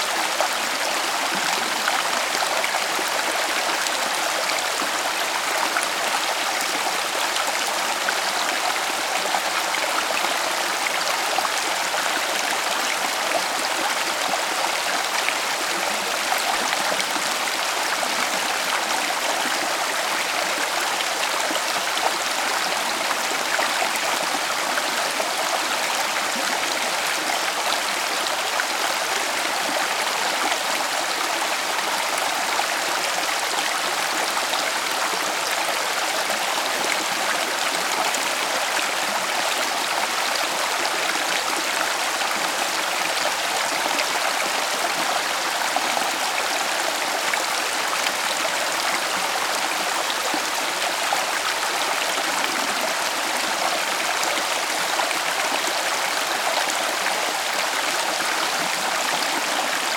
Salvinsac, La jonte
France, Lozere, River